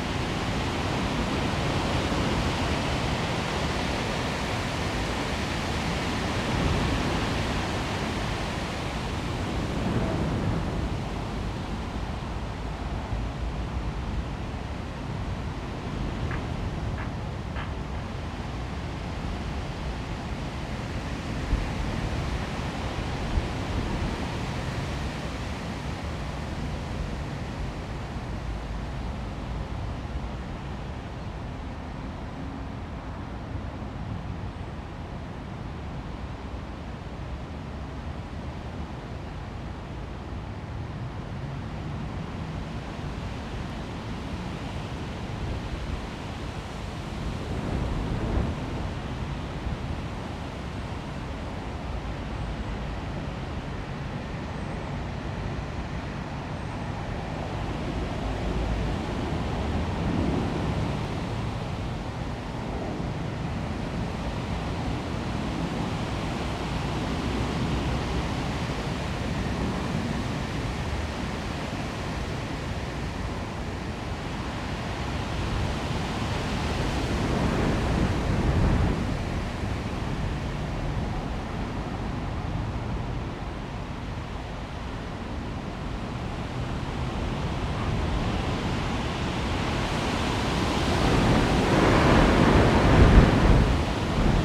London Borough of Hackney, Greater London, UK - Storm 'St Jude', sycamores, gusts and a magpie
The biggest storm in London for years was named 'St Jude' - the patron saint of lost causes. This was recorded from my back window. Most of the sound is wind blowing through two high sycamore trees - some intense gusts followed by a minute or three of relative quiet was the pattern. Planes were still flying over into Heathrow and magpies seemed untroubled.